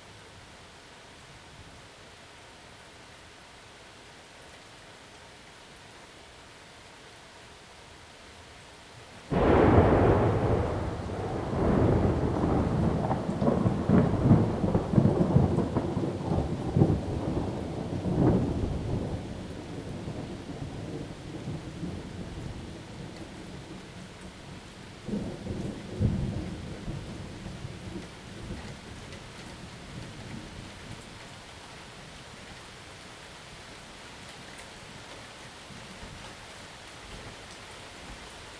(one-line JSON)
{"title": "Leavenworth St, Manhattan, KS, USA - binaural front porch thunderstorm", "date": "2022-06-24", "description": "Midnight thunderstorm in Manhattan, KS. Recording starts a little before the front hits with some rolling thunder in the distance and light rain, then louder thunder as the front hits. Thunder peaks just after the 40 minute mark and is followed by heavier rain that slowly fades out as the storm passes. Recorded in spatial audio with a Zoom H2n, edited and mixed to binaural in Reaper using Rode Soundfield.", "latitude": "39.18", "longitude": "-96.58", "altitude": "320", "timezone": "America/Chicago"}